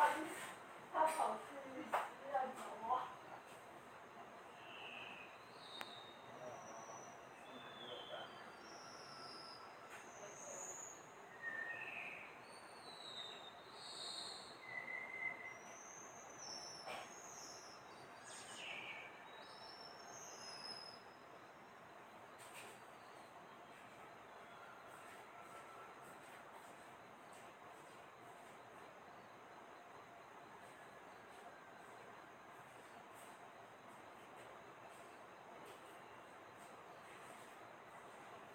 224台灣新北市瑞芳區大埔路錢龍新城 - Taiwan Whistling Thrush
Place:
Ruifang, a place surrounded by natural environment.
Recording:
Taiwan Whistling Thrush's sound mainly.
Situation:
Early at morning, before sunrise.
Techniques:
Realme narzo 50A